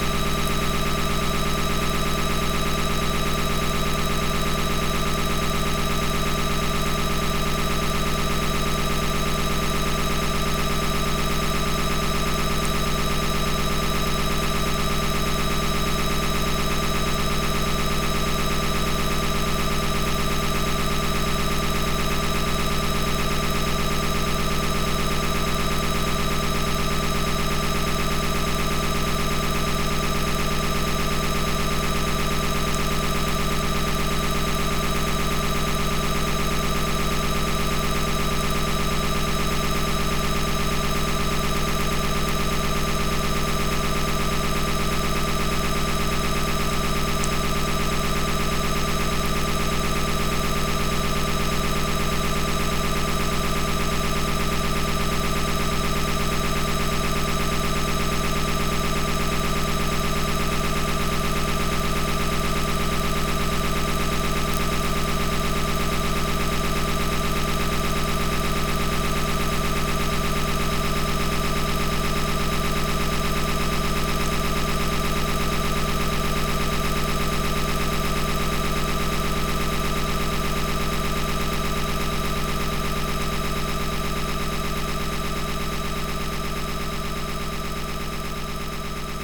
Antalieptė, Lithuania, listening power station electromagnetic
the work of power engines recorded with electromagnetic listening antenna Priezor